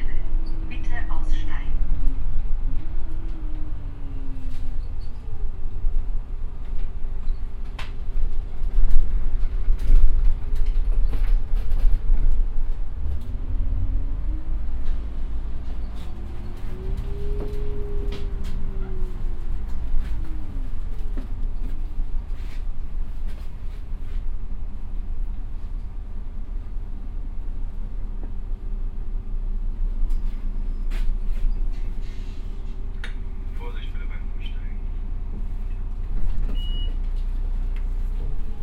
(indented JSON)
{
  "title": "berlin, in the bus, approaching hbf exit",
  "date": "2009-05-25 10:24:00",
  "description": "soundmap d: social ambiences/ listen to the people - in & outdoor nearfield recordings",
  "latitude": "52.53",
  "longitude": "13.37",
  "altitude": "29",
  "timezone": "Europe/Berlin"
}